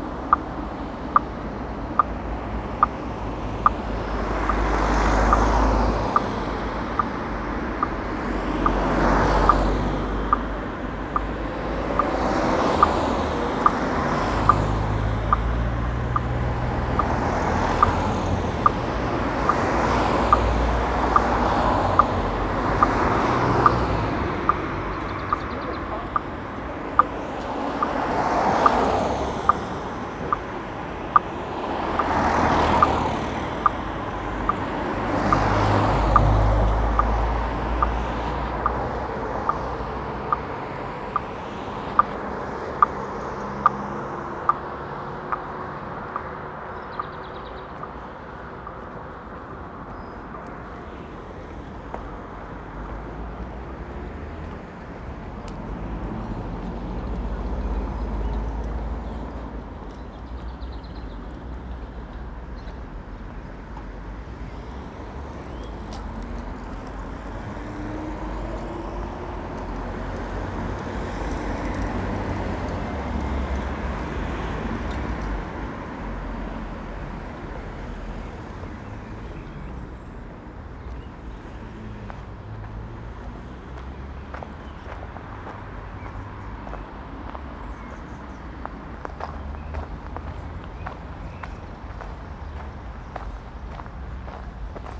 Extract 6: Traffic lights and the walk into Pankow Bürgerpark. The 5 Pankow Soundwalks project took place during spring 2019 and April 27 2020 was the first anniversary. In celebration I walked the same route starting at Pankow S&U Bahnhof at the same time. The coronavirus lockdown has made significant changes to the soundscape. Almost no planes are flying (this route is directly under the flight path into Tegel Airport), the traffic is reduced, although not by so much, and the children's playgrounds are closed. All important sounds in this area. The walk was recorded and there are six extracts on the aporee soundmap.